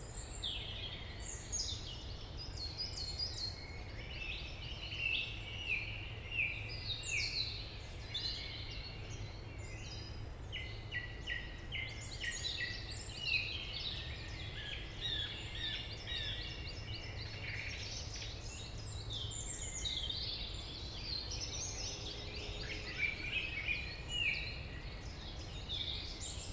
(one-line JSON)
{"title": "Rte Forestière de la Meunaz, Montcel, France - Grive musicienne", "date": "2016-04-20 18:00:00", "description": "Forêt domaniale du Montcel, vaste espace forestier, fréquenté par les grives et de nombreux oiseaux. La cloche du Montcel.", "latitude": "45.70", "longitude": "5.99", "altitude": "847", "timezone": "Europe/Paris"}